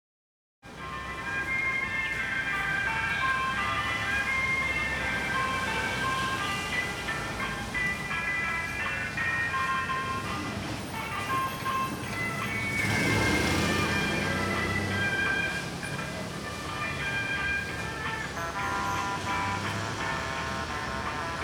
後竹圍公園, Sanchong Dist., New Taipei City - Trafficking sound of ice cream
in the Park, Traffic Sound, Trafficking sound of ice cream
Sony Hi-MD MZ-RH1 +Sony ECM-MS907